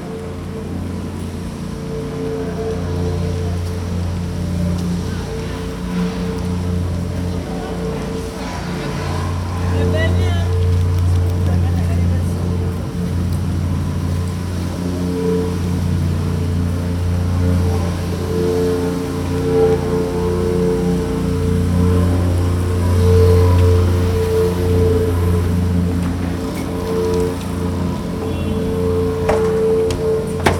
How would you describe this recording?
a worker using a machine during a street renovation, the sound work in resonnance with this wide street.